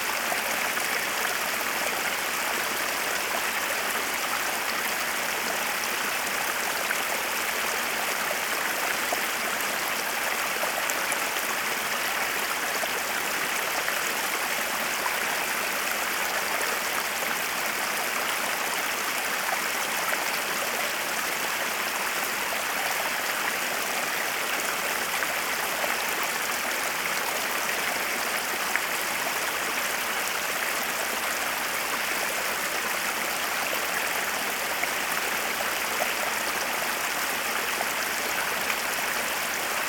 Waterfall flowing down the Winter mountains, White Sea, Russia - Waterfall flowing down the Winter mountains.
Waterfall flowing down the Winter mountains.
Водопад стекающий с Зимних гор.
12 June